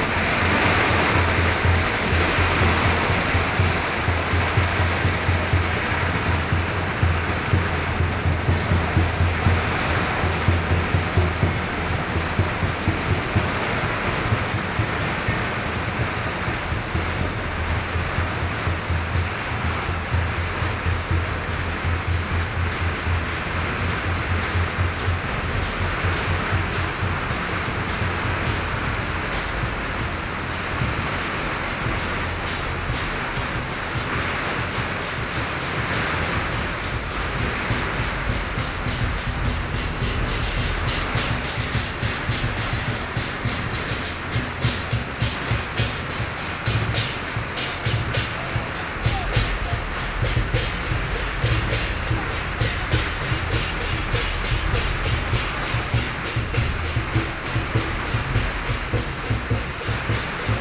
Beitou District, Taipei - Traditional temple festivals
Traditional temple festivals, Firework, Binaural recordings, Sony PCM D50 + Soundman OKM II
Taipei City, Taiwan, 20 October 2013